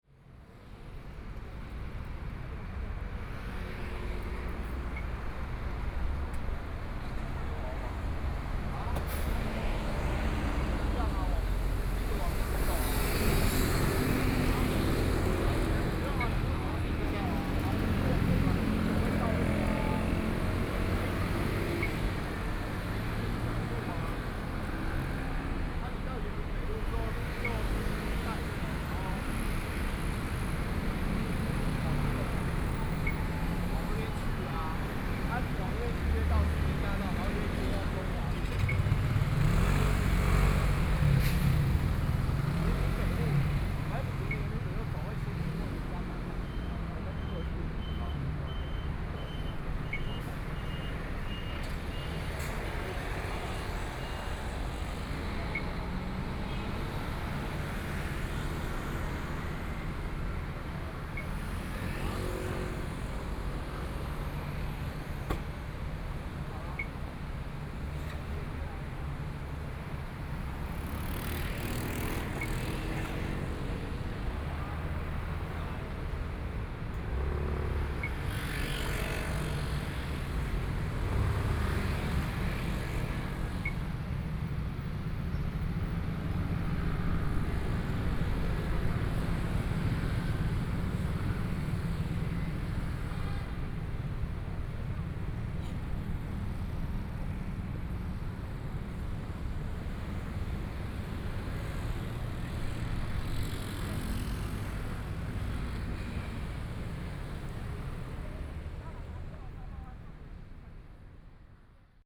Minquan E. Rd., Taipei City - In the corner
In the corner of the road, Pedestrian, Traffic Sound, Motorcycle sound
Binaural recordings, ( Proposal to turn up the volume )
Zoom H4n+ Soundman OKM II
Taipei City, Taiwan, 15 February, 17:51